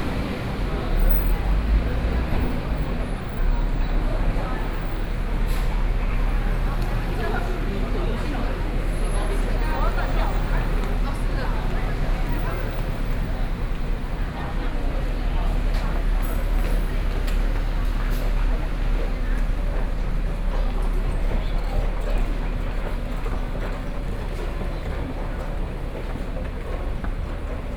Sec., Yonghe Rd., Yonghe Dist., New Taipei City - soundwalk
walking in the street, Sony PCM D50 + Soundman OKM II
New Taipei City, Taiwan, 30 August, ~21:00